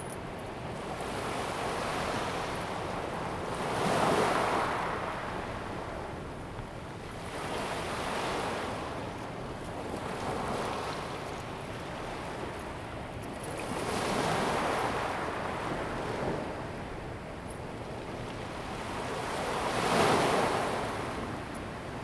Kanding, Tamsui Dist., New Taipei City - at the seaside
at the seaside, Sound waves, Aircraft flying through
Zoom H2n MS+XY + H6 XY